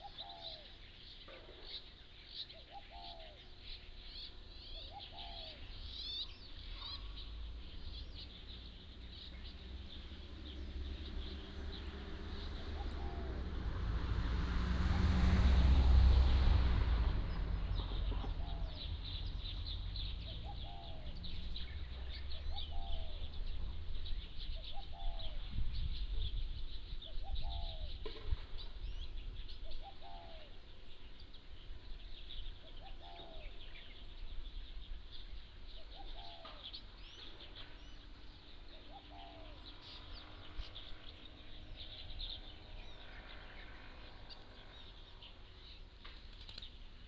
Nangan Township, Lienchiang County - Birds singing
Birds singing, Traffic Sound, Small village